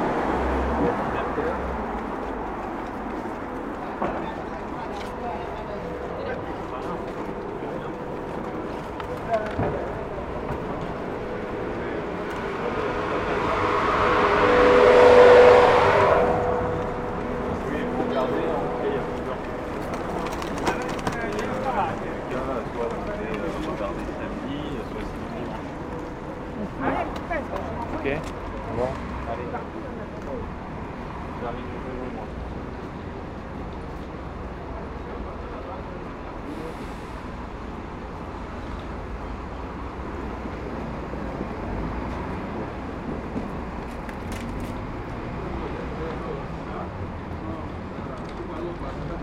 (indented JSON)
{
  "title": "Boulevard de la Chapelle, Paris, France - Metro and trains",
  "date": "2016-09-24 09:30:00",
  "description": "On the morning, somebody is cleaning the street. Metro and trains are passing by with big urban noises. At the end, walking by the street, I go inside the Paris gare du Nord station.",
  "latitude": "48.88",
  "longitude": "2.36",
  "altitude": "54",
  "timezone": "Europe/Paris"
}